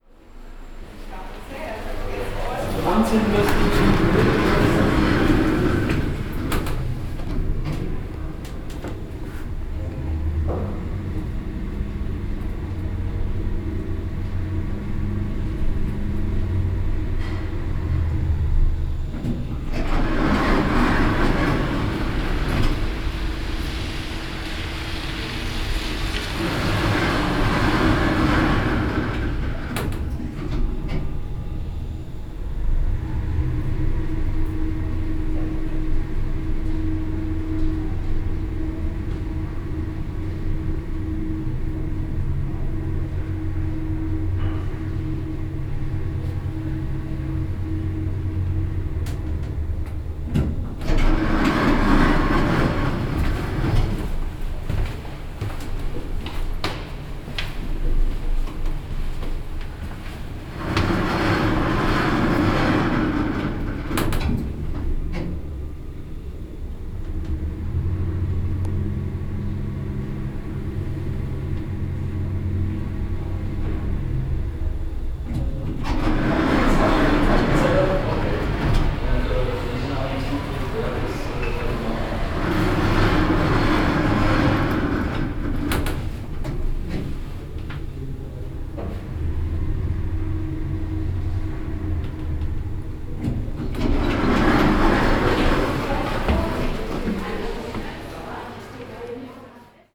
A ride in the elevator of the "City Center"